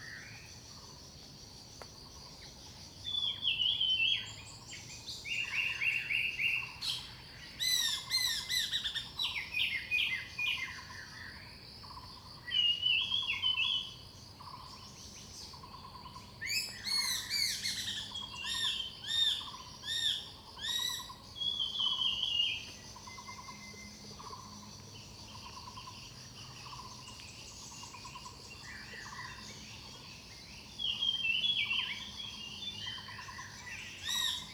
種瓜路, 草湳桃米里 - Birds singing
Birds called, Birds singing
Zoom H2n MS+XY